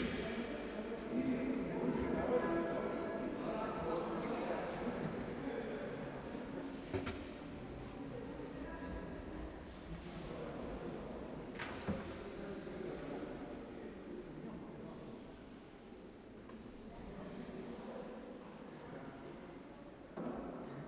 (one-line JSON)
{"title": "Lithuania, Utena, in the church after a concert", "date": "2012-11-25 17:35:00", "description": "ambience of the modern church just after the choir music festival...", "latitude": "55.51", "longitude": "25.60", "altitude": "106", "timezone": "Europe/Vilnius"}